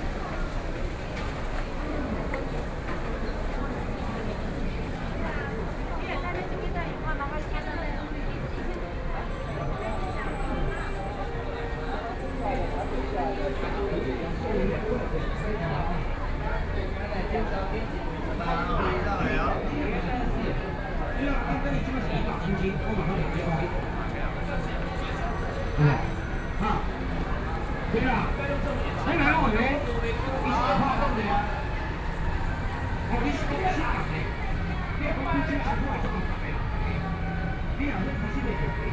自由黃昏市場, Kaohsiung City - Walking through the market
Walking through the market
Sony PCM D50+ Soundman OKM II